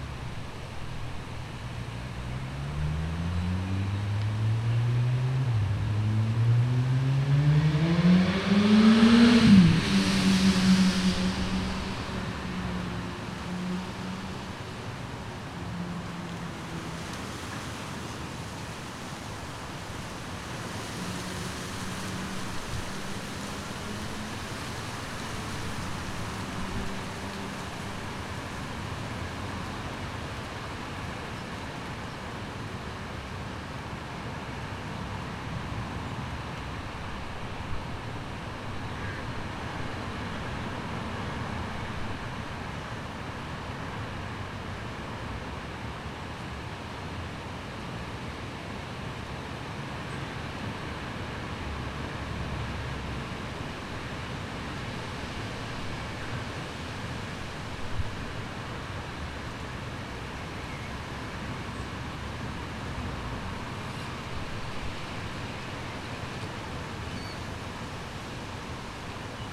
St Marys Graveyard, Oakley, UK - Napping in Graveyard
Crows and creaking trees during a windstorm while I napped, exhausted from a long bike ride, at a graveyard of a 14th century church.